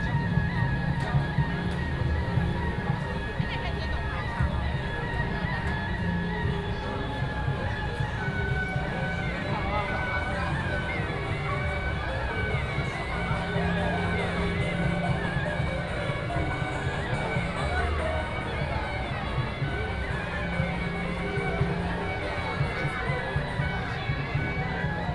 Ai 3rd Rd., Ren’ai Dist., Keelung City 基隆市 - Traditional shows
Festivals, Walking on the road, Traditional and modern variety shows, Keelung Mid.Summer Ghost Festival, Walking in the crowd